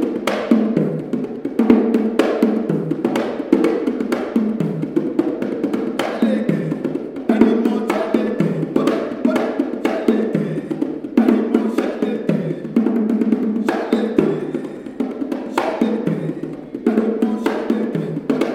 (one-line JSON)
{"title": "Helios Theatre, Hamm, Germany - Yemi Ojo everything positive...", "date": "2011-12-10 15:07:00", "description": "Yemi continues performing a song in Yoruba…", "latitude": "51.68", "longitude": "7.81", "altitude": "63", "timezone": "Europe/Berlin"}